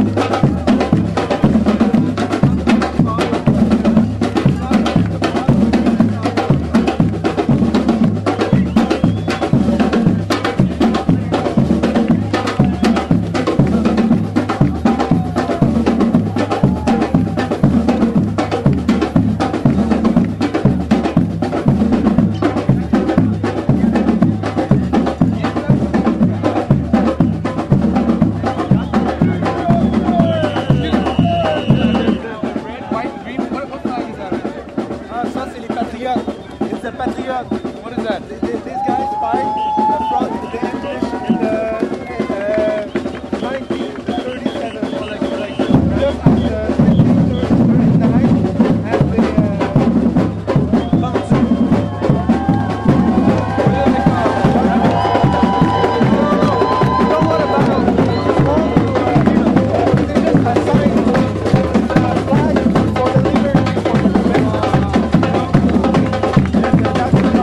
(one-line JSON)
{"title": "Montreal: Parc & Mont Royal (Parc Jeanne Mance) - Parc & Mont Royal (Parc Jeanne Mance)", "date": "2009-05-24 15:00:00", "description": "equipment used: Marantz\nQuebec independence march next to Parc Jeanne Mance, caught me by surprise when recording sounds at the park", "latitude": "45.53", "longitude": "-73.60", "altitude": "79", "timezone": "America/Montreal"}